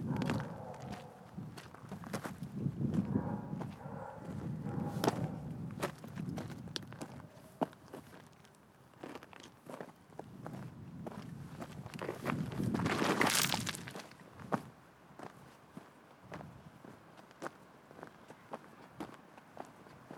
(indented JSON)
{
  "title": "Rijeka, Trsat, Campus, NewUniversityBuilding, SoundWalk",
  "date": "2009-10-25 19:42:00",
  "description": "Inside & outside of new Buildings Under Construction, University Of Rijeka",
  "latitude": "45.33",
  "longitude": "14.47",
  "altitude": "140",
  "timezone": "Europe/Berlin"
}